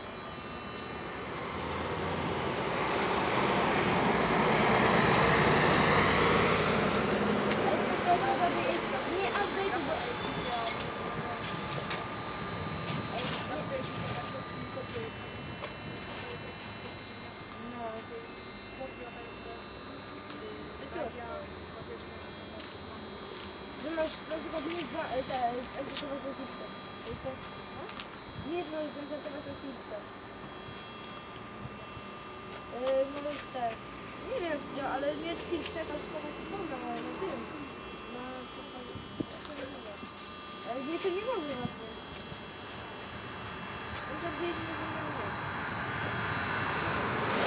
a lamp post spreading glitchy noise. kids talking, trafic
Poznań, Poland, 21 December 2012